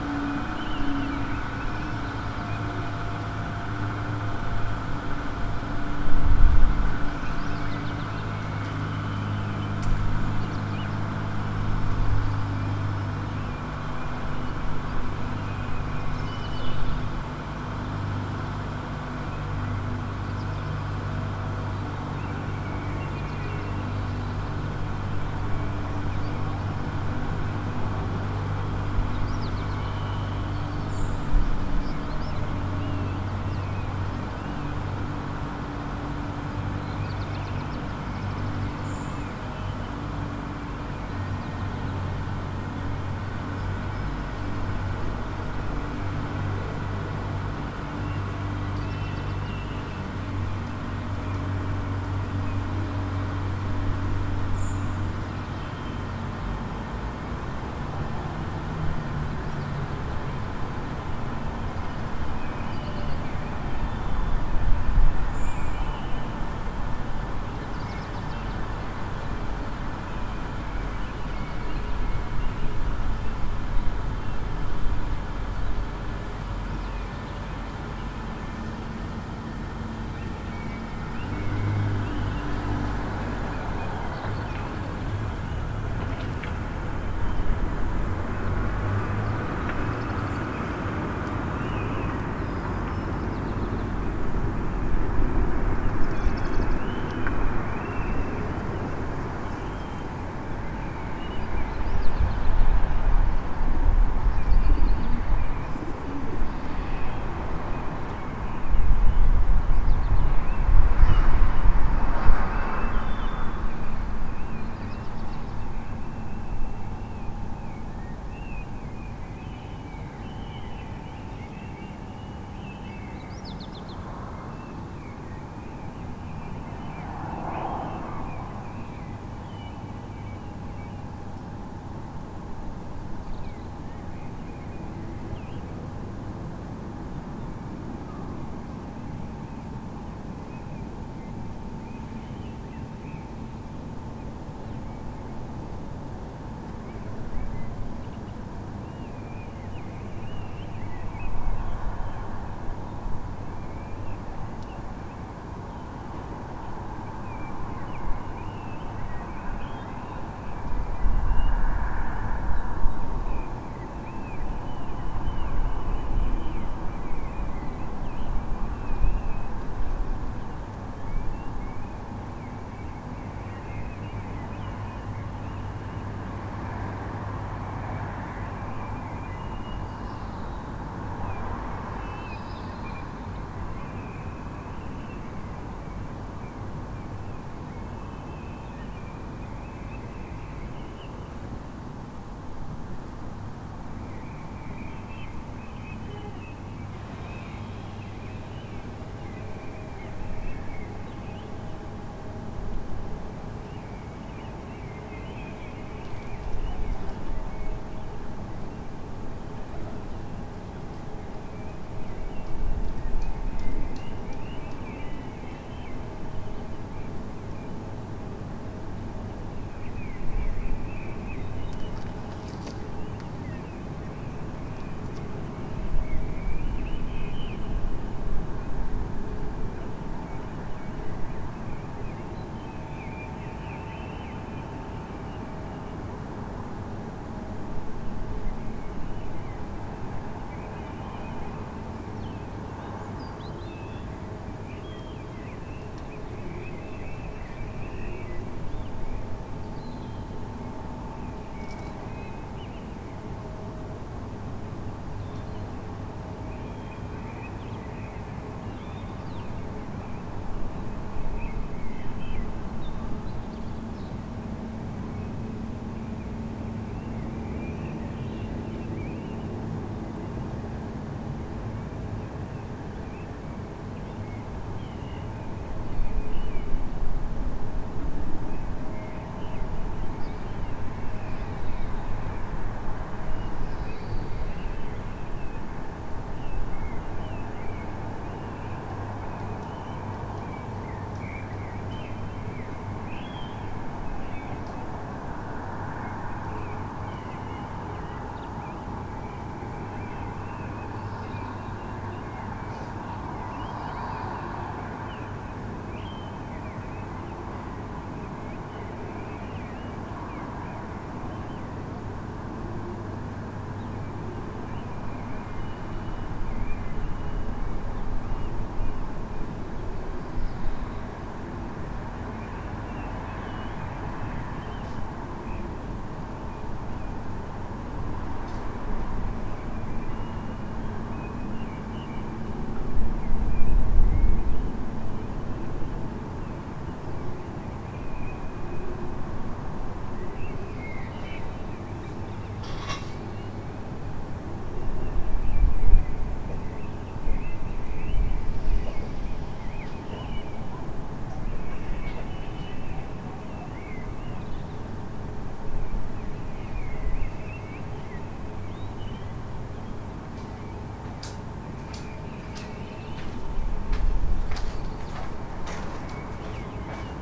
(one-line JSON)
{"title": "R. Actriz Palmira Bastos, Lisboa, Portugal - Spring will arrive, early bird activity", "date": "2021-02-16 05:01:00", "description": "Early morning sounds before sunrise, mainly birds\nSome machine noise also", "latitude": "38.75", "longitude": "-9.11", "altitude": "65", "timezone": "Europe/Lisbon"}